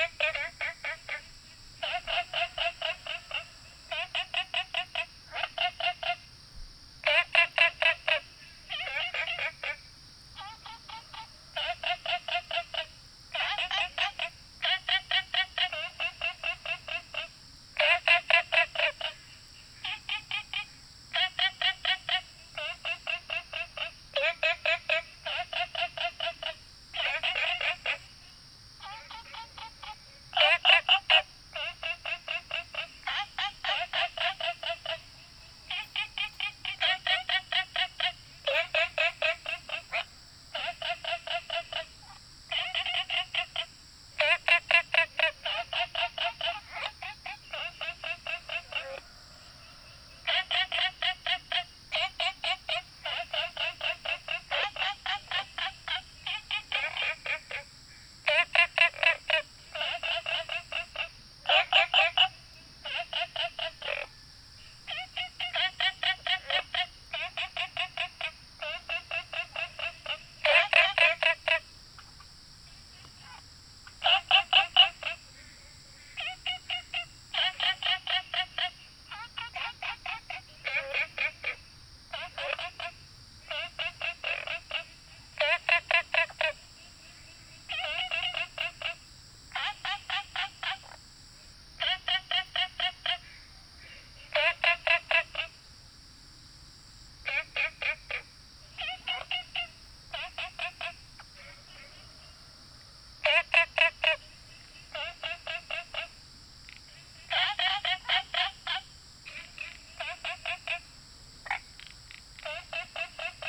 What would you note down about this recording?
Frogs chirping, Insects called, Small ecological pool